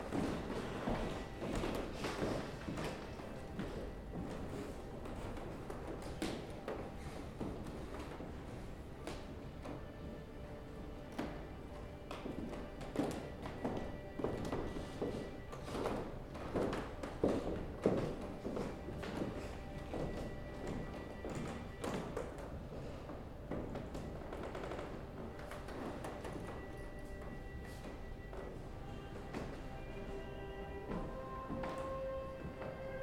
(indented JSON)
{
  "title": "Hof van Busleyden, Mechelen, België - Footsteps",
  "date": "2019-02-02 16:20:00",
  "description": "[Zoom H4n Pro] Creaking wooden ceiling/floor of the room above.",
  "latitude": "51.03",
  "longitude": "4.48",
  "altitude": "6",
  "timezone": "Europe/Brussels"
}